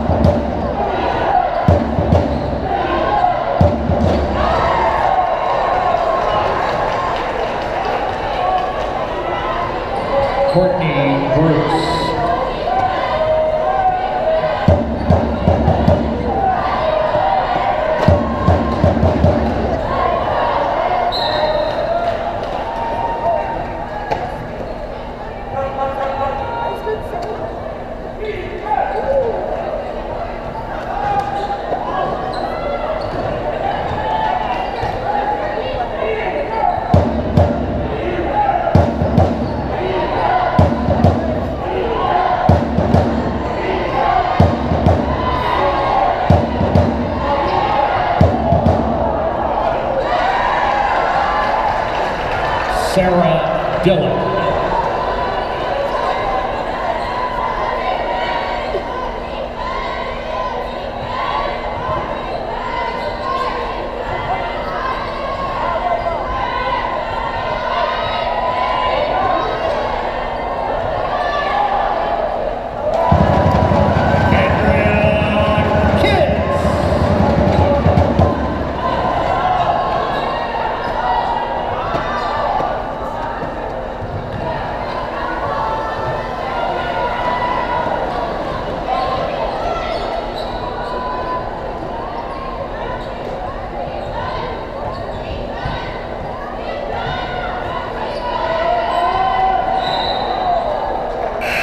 Windsor Lancers Women's basketball home opener at the St. Denis center. I put my camera down and walked away. I think the sound really brings in the atmosphere of the game